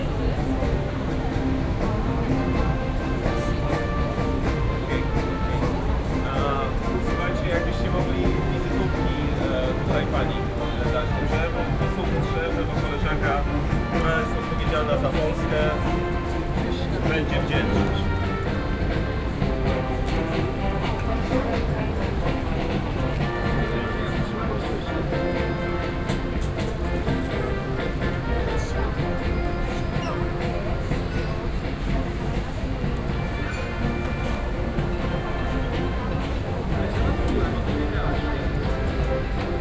(binaural) recorded on top floor terrace of the hotel with a view on the Syntagma Square. An orchestra playing their last song this evening, brass instruments reverberated off the walls of buildings surrounding the square. traffic, swoosh of fountains, people talking on the terrace. (sony d50 + luhd pm1bin)
Athina, Greece, 6 November 2015, ~17:00